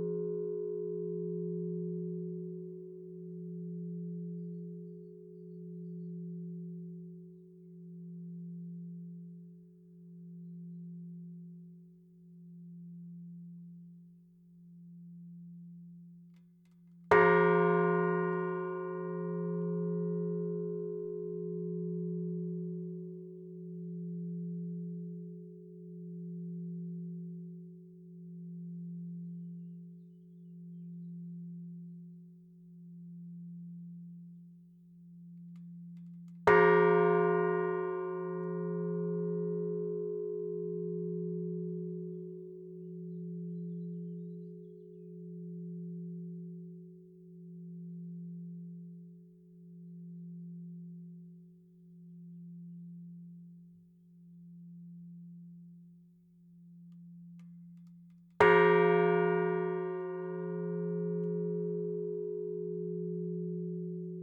Rue de l'Église, Flines-lès-Mortagne, France - Flines-Lez-Mortagne (Nord) - église
Flines-Lez-Mortagne (Nord)
église - Tintement manuel cloche aigüe
Hauts-de-France, France métropolitaine, France